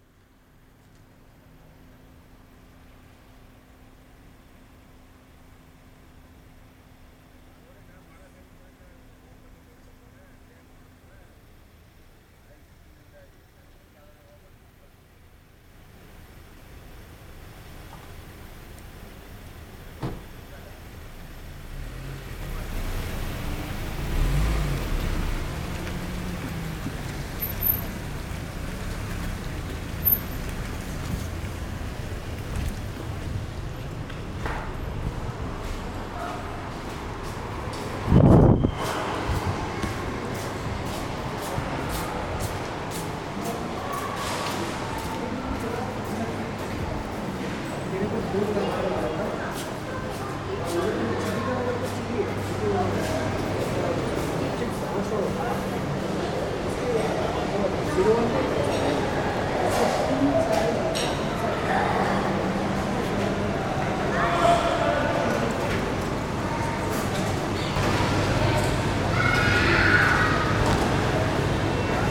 ONE MALL، Wadi Al Shaheeniya St, Doha, Qatar - 01 Mall, Qatar
One of a series of sound walks through Qatar's ubiquitous shopping malls
قطر Qatar, 28 February 2020